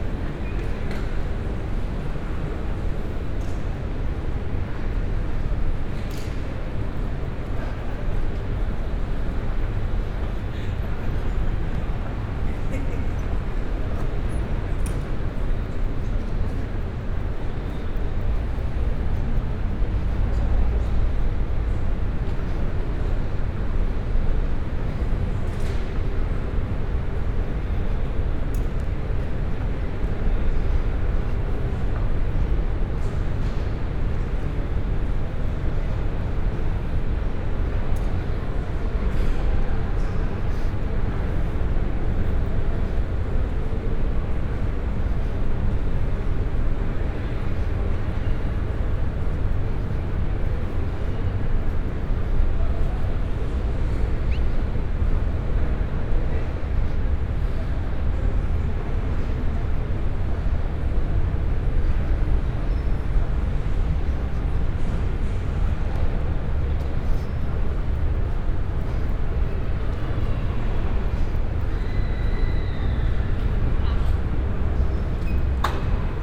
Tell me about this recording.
(binaural) a walk around the terminal. passing by caffees, bar, shops, gates, riding moving pathwalks.